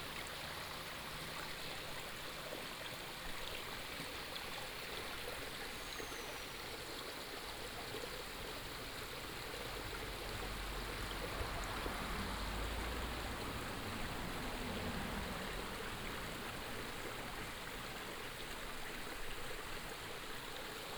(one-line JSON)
{"title": "石觀音坑, Gongguan Township - Small stream", "date": "2017-09-24 16:44:00", "description": "Small stream, traffic sound, Binaural recordings, Sony PCM D100+ Soundman OKM II", "latitude": "24.54", "longitude": "120.87", "altitude": "107", "timezone": "Asia/Taipei"}